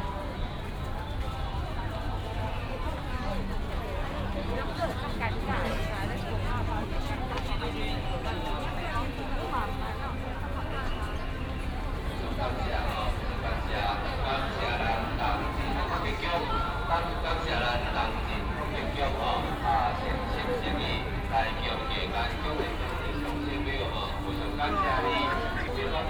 Matsu Pilgrimage Procession, Traffic sound, A lot of people
27 February 2017, 10:15